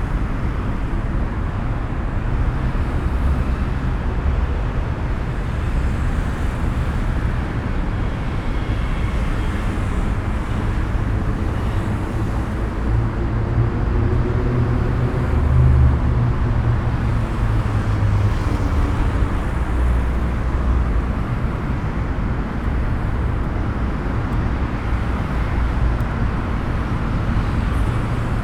Triest, Via dell'Istria, Italy - traffic tunnel drone
tube resonances in the traffic tunnel below Via dell'Istria. The intense and almost violent drone at this place creates a sonic isolation to the listener, with strong physical impact.
(SD702, DPA4060)
Trieste, Italy, 6 September 2013